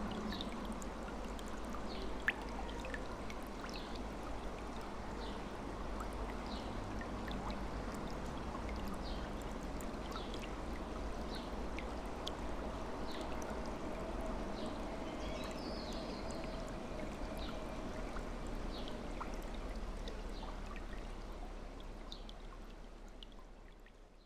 At this point, beneath the road there is a stream flowing during the wet season. Stressed cars and busy people passing by over, only rats & birds take the time to rest on the peaceful chants of the water going down to the sea.